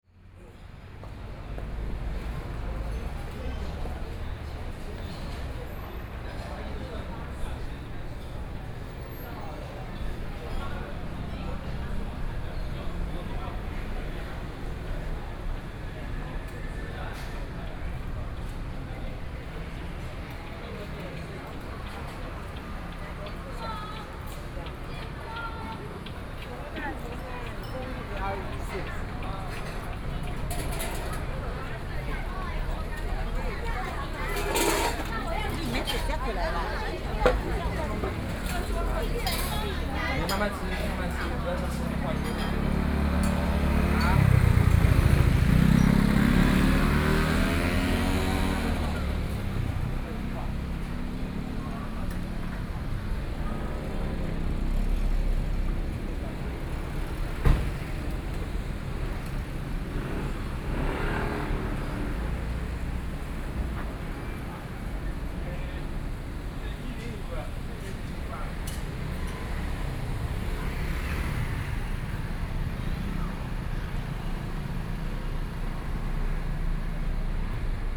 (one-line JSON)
{"title": "Zhongshan Rd., 礁溪鄉六結村 - walking on the Road", "date": "2014-07-26 18:56:00", "description": "Traffic Sound, walking on the Road, Various shops sound, Tourist, The sound of a train traveling through the neighborhood\nSony PCM D50+ Soundman OKM II", "latitude": "24.83", "longitude": "121.77", "altitude": "13", "timezone": "Asia/Taipei"}